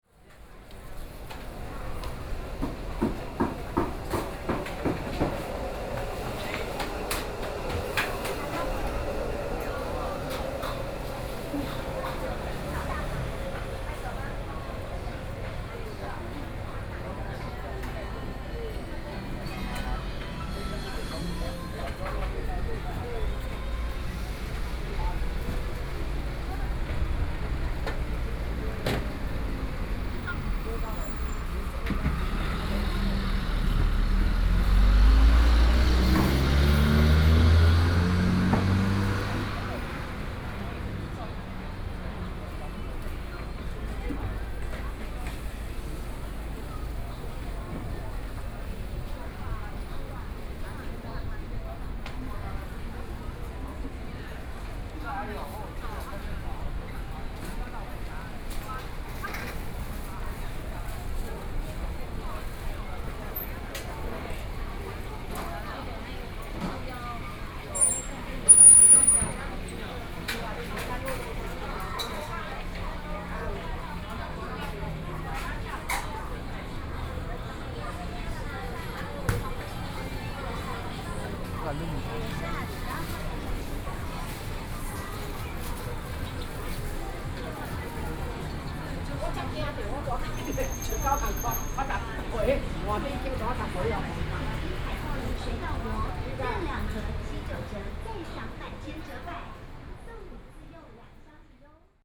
中山區桓安里, Taipei City - In the Street
Vendors, Walking through the market, Traffic Sound
Taipei City, Taiwan, 5 May 2014